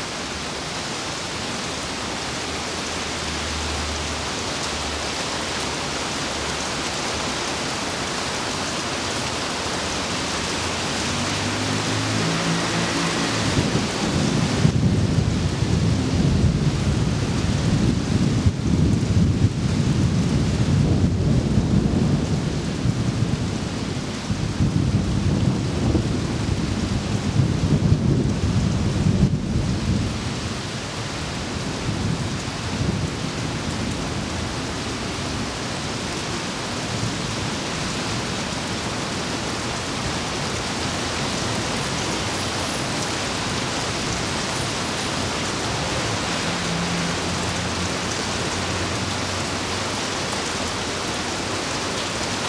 Yonghe, New Taipei City - Thunderstorm
Thunderstorm, Sony ECM-MS907, Sony Hi-MD MZ-RH1
板橋區 (Banqiao), 新北市 (New Taipei City), 中華民國, 28 June 2011